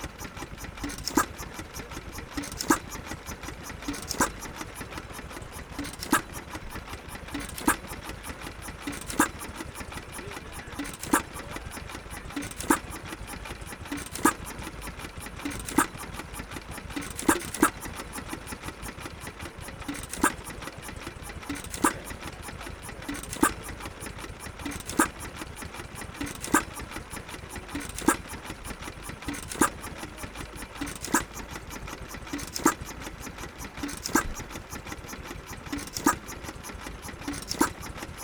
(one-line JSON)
{"title": "Back Ln, York, UK - Ryedale Show ... static engine ...", "date": "2017-07-25 12:20:00", "description": "Static engine ... pre WW1 Amanco open crank hit and miss general machine ... used to power farm machinery or as a water pump ...", "latitude": "54.25", "longitude": "-0.96", "altitude": "50", "timezone": "Europe/London"}